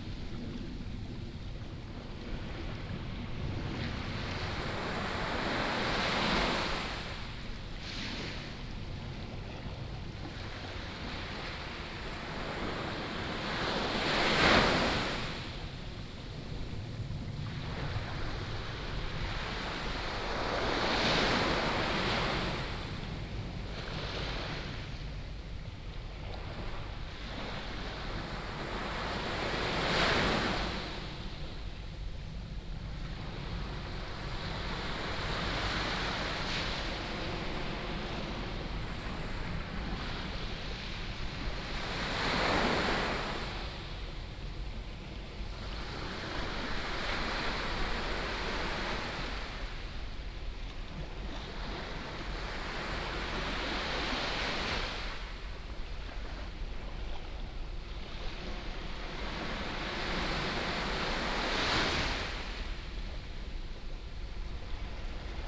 next to Small fishing port, Sound of the waves, At the beach